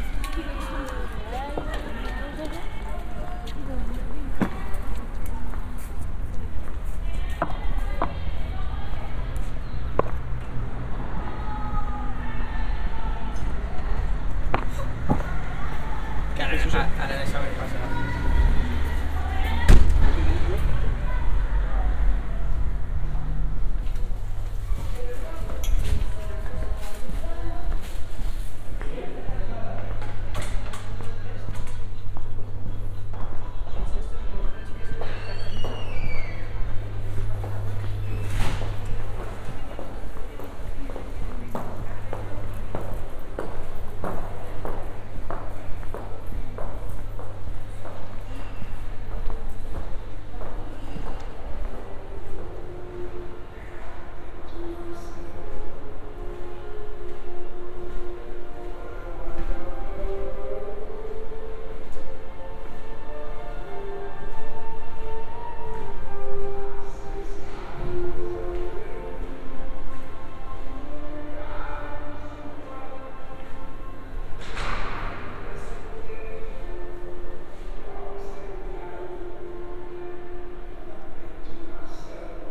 Cuenca, Cuenca, España - #SoundwalkingCuenca 2015-11-20 Soundwalk through the Fine Arts Faculty, Cuenca, Spain
A soundwalk through the Fine Arts Faculty building, Cuenca, Spain.
Luhd binaural microphones -> Sony PCM-D100